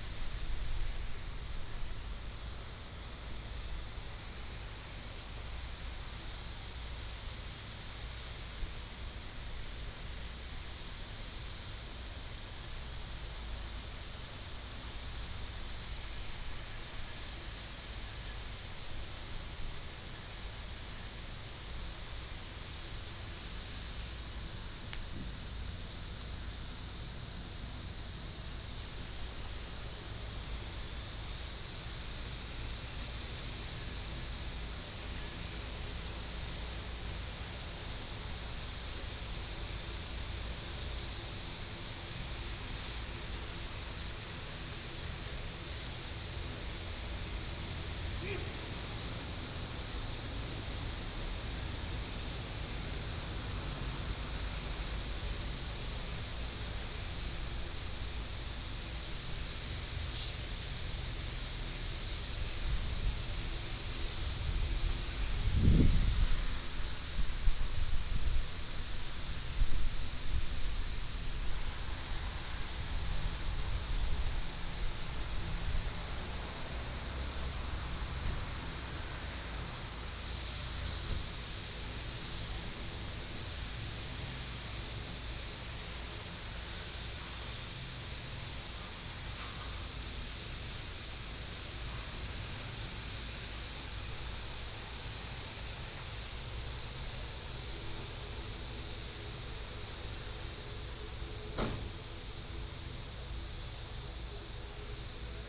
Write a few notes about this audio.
In the square outside the airport